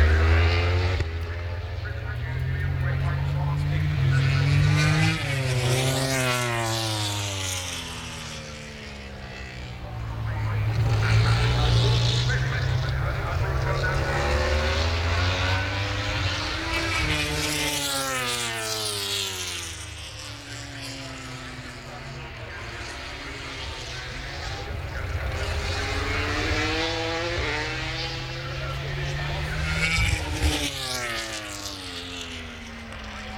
Lillingstone Dayrell with Luffield Abbey, UK - british motorcycle grand prix 2013 ...
motogp warmup ... lavalier mics ...